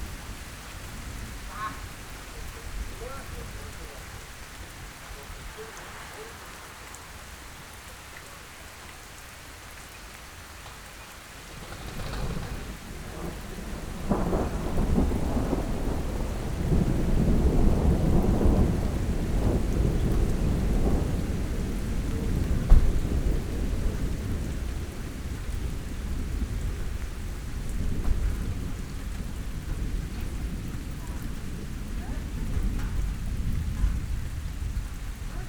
Beselich Niedertiefenbach - rainy afternoon in garden
a rainy afternoon in the garden, thunder and rain
(Sony PCM D50, DPA4060)
Beselich, Germany, 13 July 2014